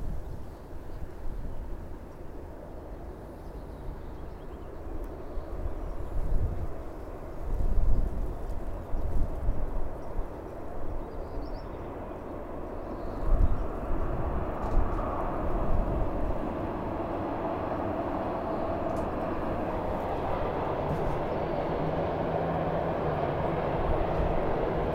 train crossing street & railway bridge, bullay/alf - Alf, train crossing bridge
mosel bridge, bullay/alf. this is a two level bridge: street level for car traffic, upper lever for railway traffic. recorded may 31, 2008 - project: "hasenbrot - a private sound diary"
Germany